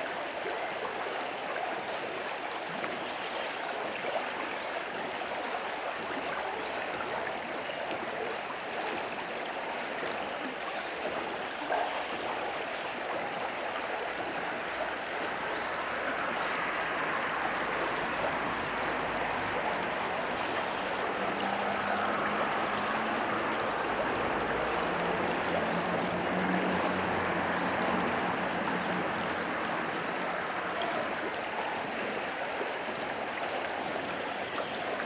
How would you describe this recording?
*Recording technique: inverted ORTF. vociferous tones and textures, Recording and monitoring gear: Zoom F4 Field Recorder, RODE M5 MP, Beyerdynamic DT 770 PRO/ DT 1990 PRO.